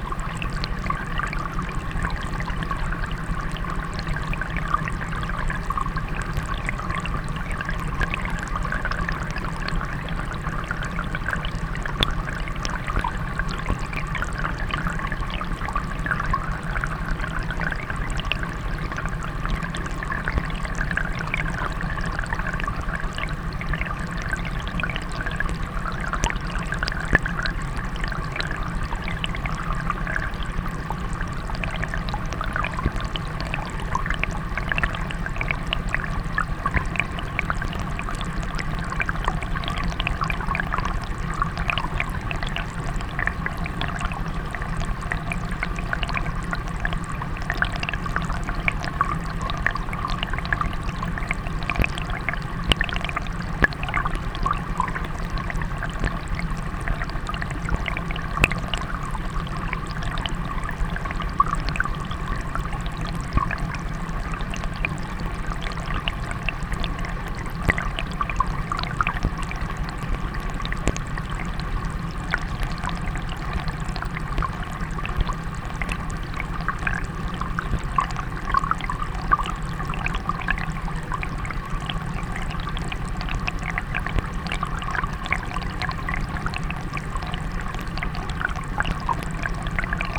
Walking Holme BlckplBridge
Water flowing underneath a footbridge.
Kirklees, UK, 29 May 2011